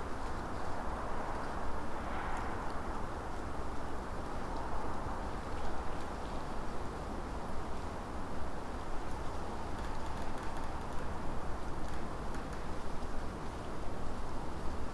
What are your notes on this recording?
Late evening recording at Birchen Copse, Woodcote. The bleats of sheep from a nearby farm, owls some way off, the creaking of trees and rustling movements of small animals in the bushes close-by, heard over a shifting drone of cars on the nearby A4074, trains on the Reading-Oxford mainline and planes passing high overhead. Recorded using a spaced pair of Sennheiser 8020s at 3m height on an SD788T.